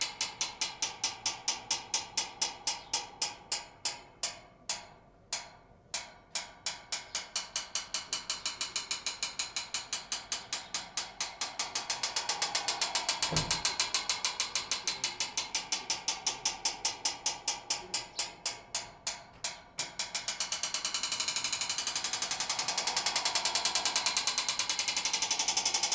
Castel de Guadalest - Province d'Alicante - Espagne
Tourniquet métallique
Zoom F3 + AKG 451B